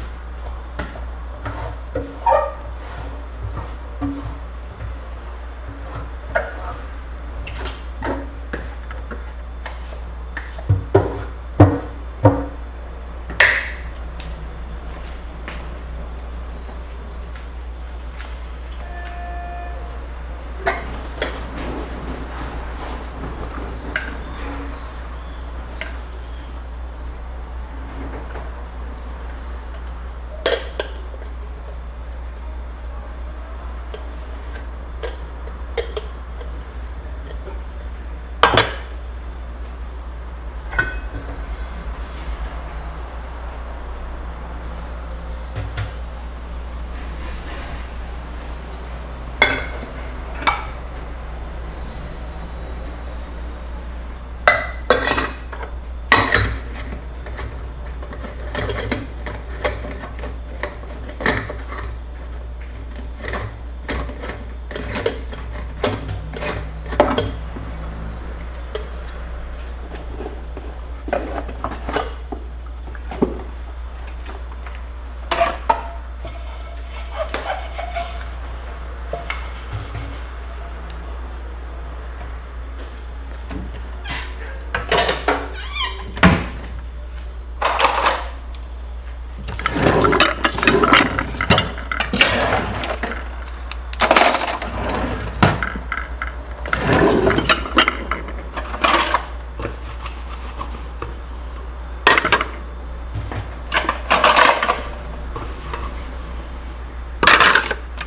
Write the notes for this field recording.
Preparing breakfast. House of 9 women. Preparando café da manhã. Na casa das 9 mulheres.